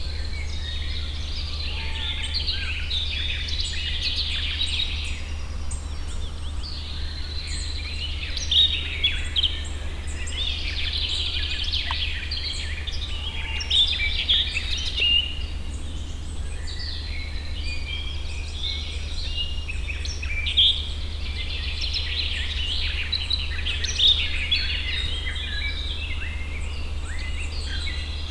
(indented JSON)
{
  "title": "Morasko, road towards the nature reserve - forest matrix",
  "date": "2015-06-04 05:01:00",
  "description": "early morning forest ambience of the Morasko nature reserve. due to early hour there is not much impact of the local traffic. the diversity of bird calls is amazing. some of the calls can be heard only around this hour.",
  "latitude": "52.48",
  "longitude": "16.90",
  "altitude": "116",
  "timezone": "Europe/Warsaw"
}